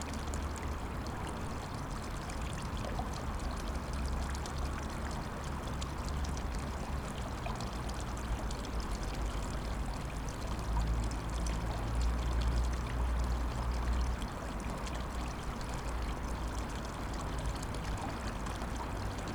Utena, Lithuania, a streamlet at the bridge